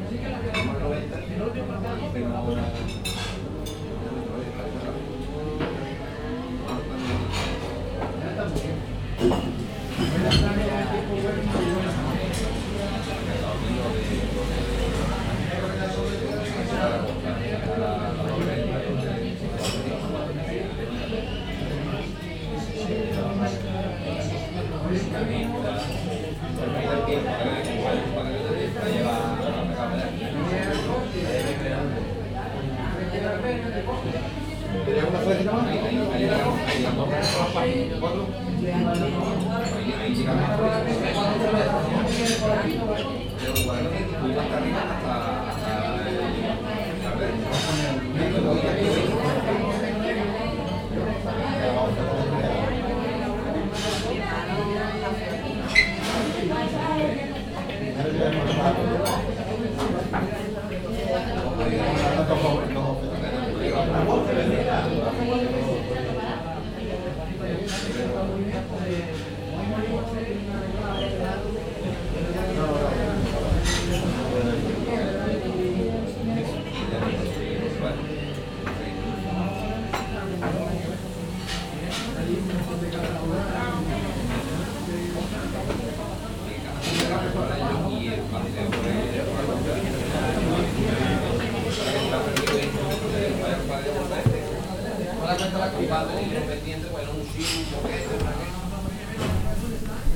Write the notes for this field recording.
sunday lunch time, motorcycle race in the TV